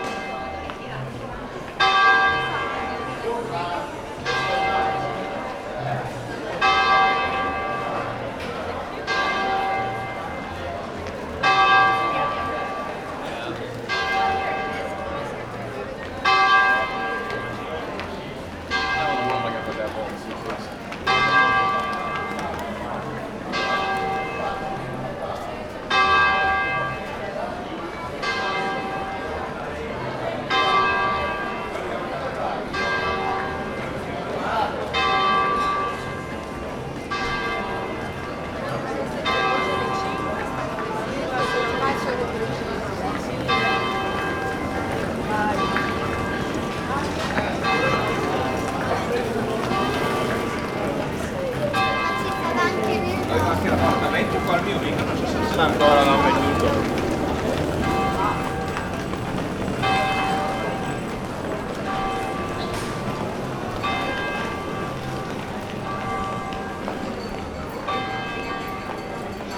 Riomaggiore, La Spezia province - main street
walking along the main street in Riomaggiore. it's bustling with people, restaurants and cafes are full. very lively atmosphere.